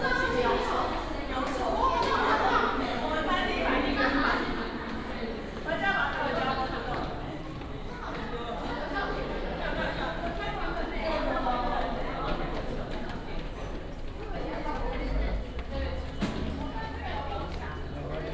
Yuanli Station, Yuanli Township - Walking at the station
Walking at the station, Many high school students
Miaoli County, Taiwan, 19 January 2017